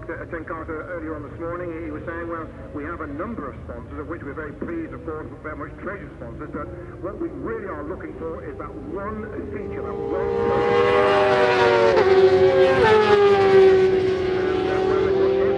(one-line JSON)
{"title": "Silverstone Circuit, Towcester, UK - WSB 2003... Supersports ... Qualifying ... contd ...", "date": "2003-06-03 14:00:00", "description": "WSB 2003 ... Supersports ... Qualifying ... contd ... one point stereo mic to minidisk ... date correct ... time optional ...", "latitude": "52.07", "longitude": "-1.02", "altitude": "152", "timezone": "Europe/London"}